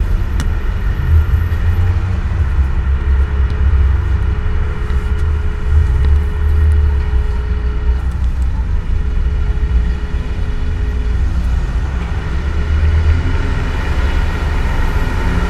Binckhorst, Laak, The Netherlands - Dialtone
dialtone of phone in phone booth recorded with DPA mics and Edirol R-44
2 March 2012, 17:30